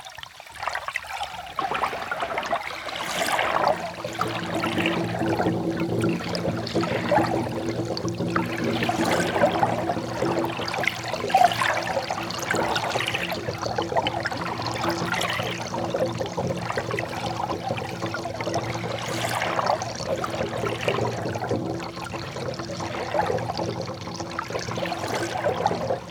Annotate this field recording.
Ausserhalb der Mühle nahe am Bachzufluß an einem Wasser-Filterbecken. Der Klang des bewegten, leicht sprudelnden Wassers. Outdoor near the small stream that leads into the river Our. At a water filter basin. The sound of the moved and mild sparkling water.